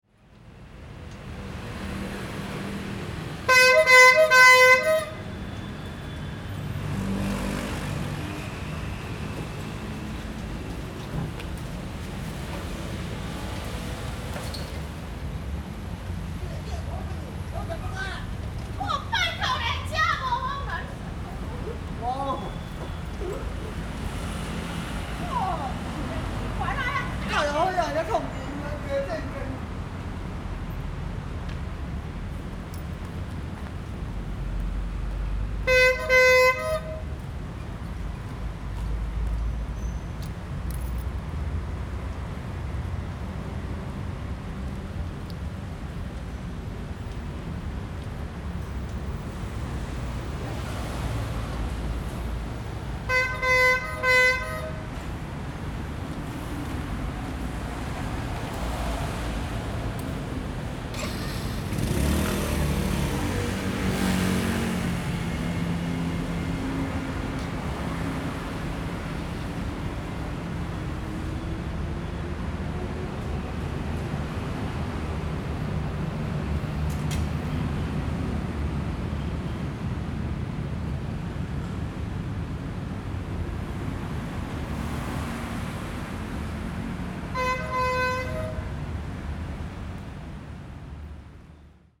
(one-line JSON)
{"title": "Ln., Yongheng Rd., Yonghe Dist. - Sell ice cream", "date": "2011-06-08 19:08:00", "description": "Sell ice cream\nZoom H4n+ Rode NT4", "latitude": "25.00", "longitude": "121.52", "altitude": "20", "timezone": "Asia/Taipei"}